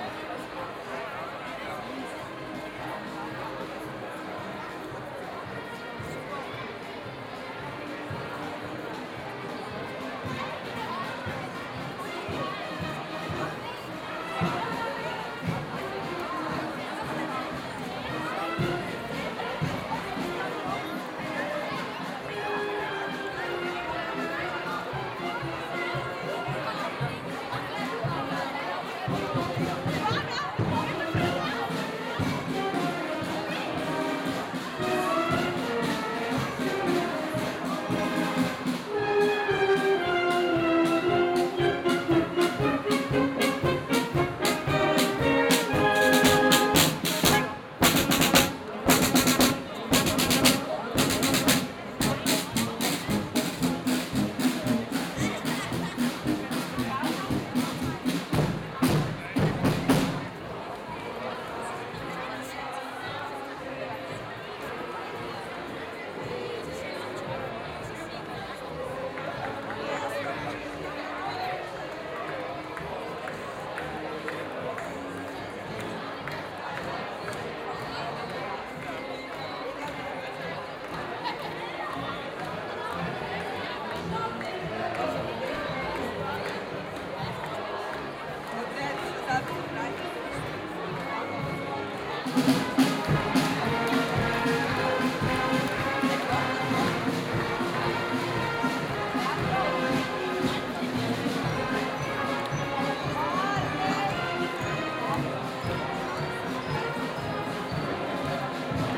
Aarau, Maienzug, Rathausgasse, Schweiz - Maienzug 2
Continuation of the Maienzugs. Due to noise there are three cuts in this recording. You hear first applause for the brassband of Maienzug 1 and their version of Michael Jackson's Thriller, other brass bands (one quote Smoke on the Water), and again the Burschenschafter with their strange rituals of singing and stamping.
Aarau, Switzerland